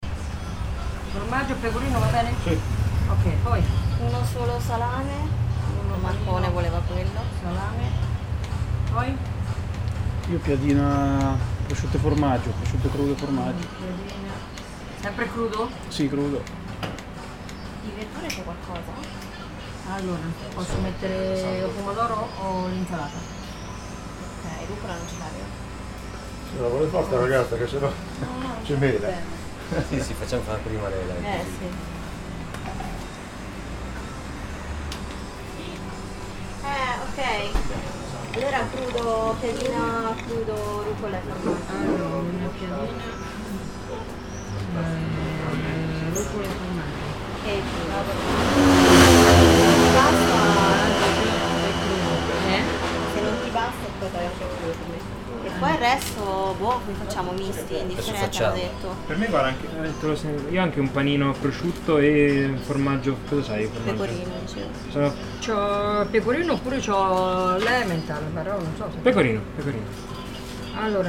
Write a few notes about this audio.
ambience of the small bar, wooden walls, people ordering some meals, radio and refrigeration fans on the background. (xy: Sony PCM-D100)